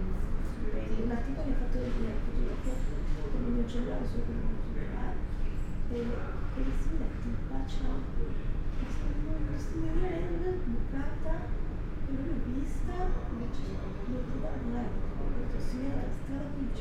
Ospedale di Cattinara, Trieste, Italy - corridor, emergency department
slide gates, spoken words, beds on wheels, steps ...
Università degli Studi di Trieste, Trieste, Italy, 10 September 2013